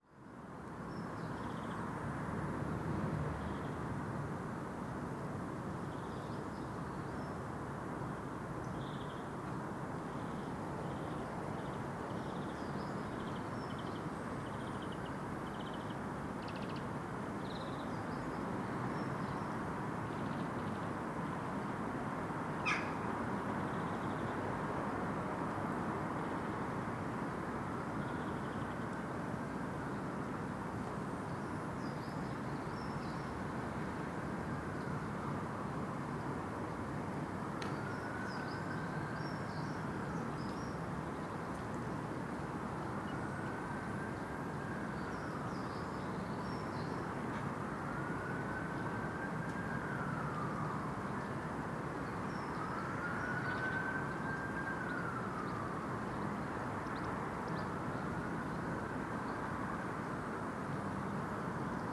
Contención Island Day 68 inner northwest - Walking to the sounds of Contención Island Day 68 Saturday March 13th
The Poplars High Street Elmfield Road North Avenue
Green and blue
bins in a back-alley
Ivy drapes the wall
Pigeons peck at the cobbles
two display
one is lame
England, United Kingdom, 13 March, ~10:00